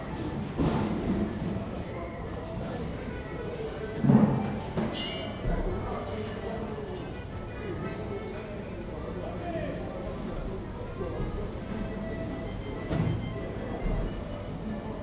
Via Cardinale Dusmet, Catania CT, Italy - Fish Market
Fish Market under the railway, cleaning of the place.